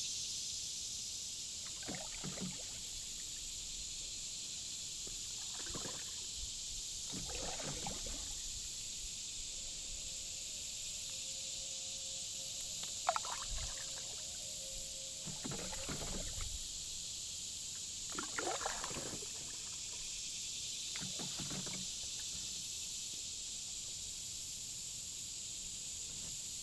Recording from packraft while paddling down Meramec River
Missouri, United States, 22 August 2021